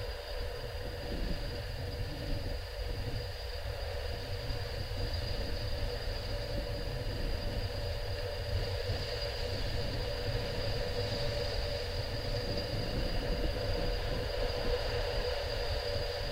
disused pier on Portland - contact mics on winch
8 June 2013, ~11:00